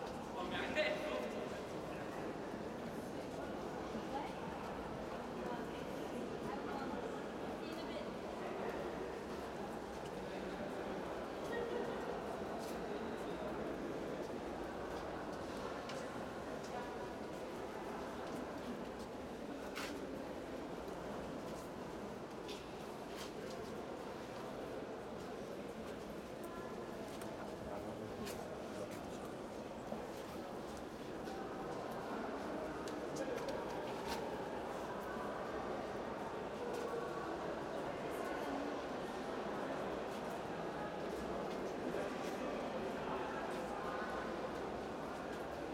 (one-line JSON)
{"title": "Platz der Republik, Berlin, Germany - Bundestag Dome", "date": "2013-10-06 18:48:00", "latitude": "52.52", "longitude": "13.38", "altitude": "46", "timezone": "Europe/Berlin"}